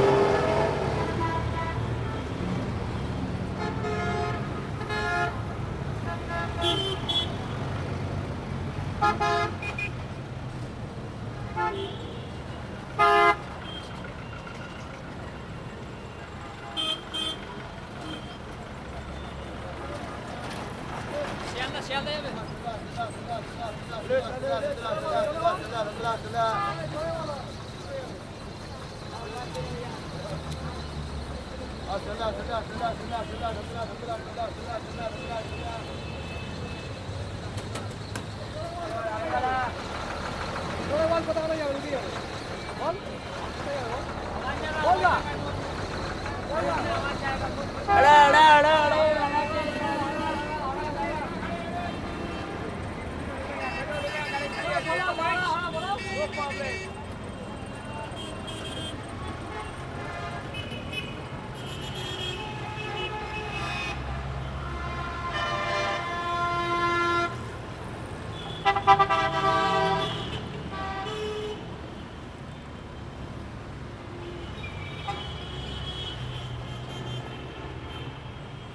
Floor, Media House, Park St, Park Street area, Kolkata, West Bengal, Inde - Calcutta - Park-Street
Calcutta - Park-Street
Park Street a une petite particularité. Elle est en sens unique mais celui-ci change au cours de la journée.
Ambiance de rue.
West Bengal, India